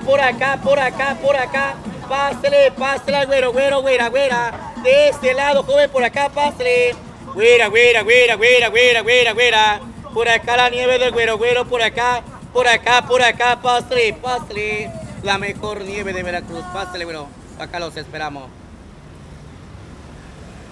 Guero-Guero Icecream - Ice-Cream Seller Screaming (Veracruz)
German is announcing the ice-cream Guero-Guero in front of the shop.
4 November 2017, 19:00